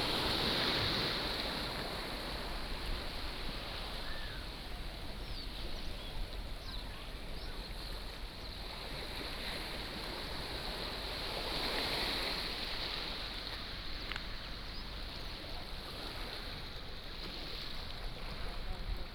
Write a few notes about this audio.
Small fishing port, Sound of the waves, The weather is very hot